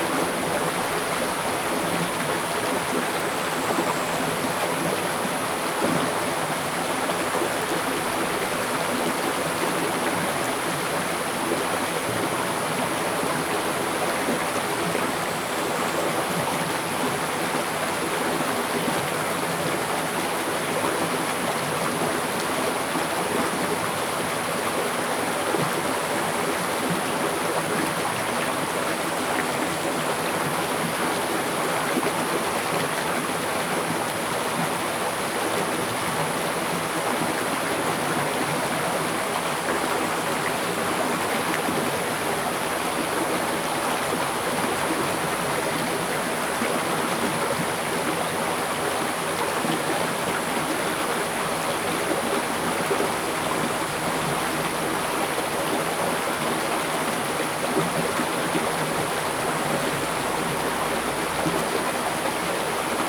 種瓜坑溪.桃米里Puli Township - In streams
The sound of the river
Zoom H2n MS+XY +Spatial audio